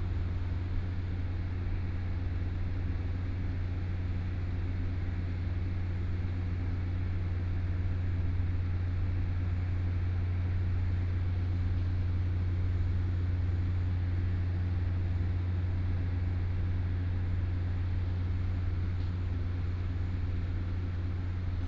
Next to the station, small Town
Taitung County, Taiwan